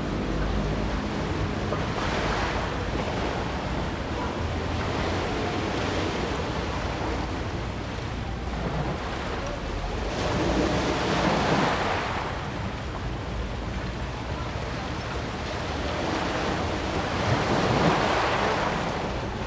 淡水海關碼頭, New Taipei City - Sound wave
At the quayside, Sound wave, The sound of the river
Zoom H2n MS+XY